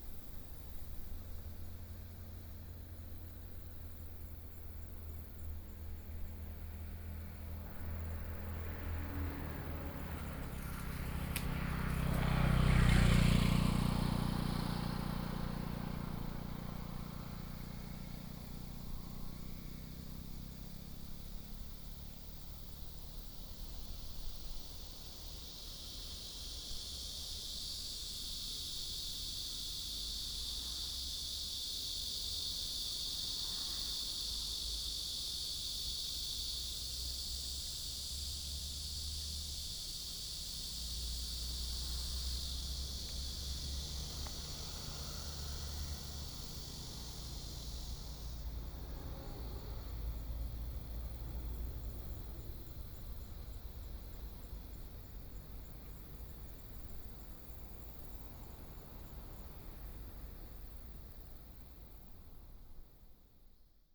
壯圍鄉永鎮村, Yilan County - Bamboo forest
Bamboo forest, Sound wave, Windbreaks, Birdsong sound, Small village, Cicadas sound
Sony PCM D50+ Soundman OKM II
26 July 2014, 15:00